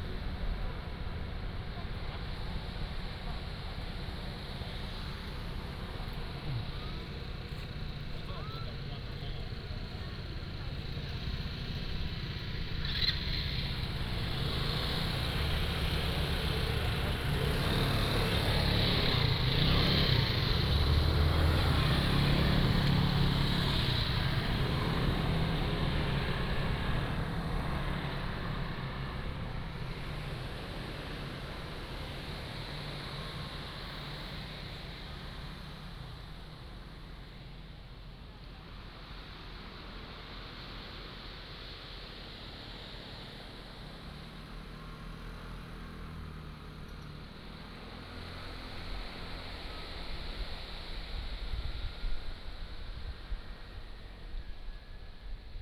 厚石群礁, Liuqiu Township - On the coast
Traffic Sound, On the coast, Sound of the waves, Birds singing
Pingtung County, Taiwan, 2 November, ~09:00